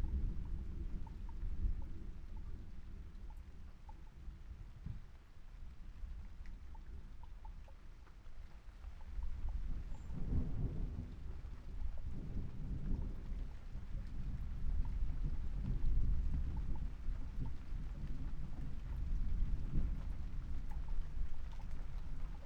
Luttons, UK - inside shed ... outside thunderstorm ...
inside shed ... outside thunderstorm ... xlr SASS on tripod to Zoom F6 ...
Malton, UK, 31 July, 21:13